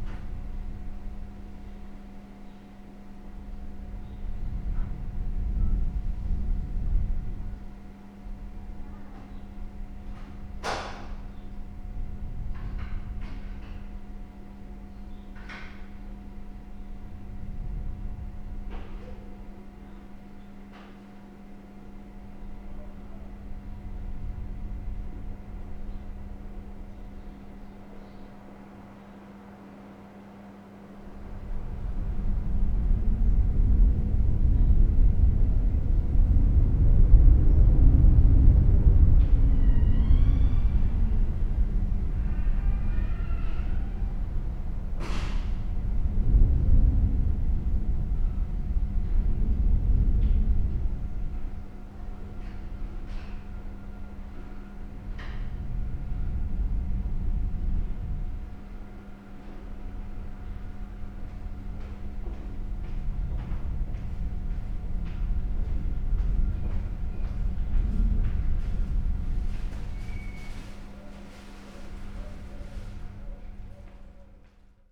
Corniglia, hostel - wind barging in
there was quite a big gap under the door and the wind was wailing through it, carrying sounds of the village with it. hostel staff moving about on the other side.